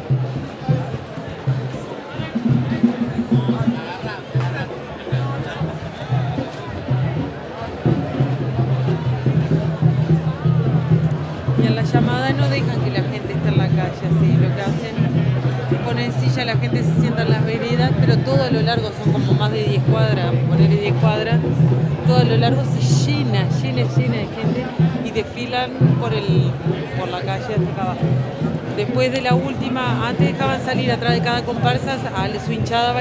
Limites Seccional 16 de Policia, Montevideo, Uruguay - candombe musicos en la calle